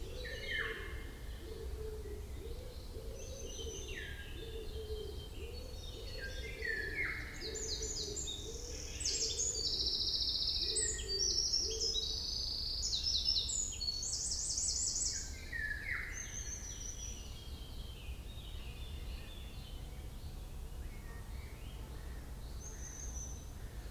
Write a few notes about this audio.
Dans le bois des Charmettes tout près de Chambéry et relativement abrité des bruits de la ville, chants de loriots et troglodyte mignon.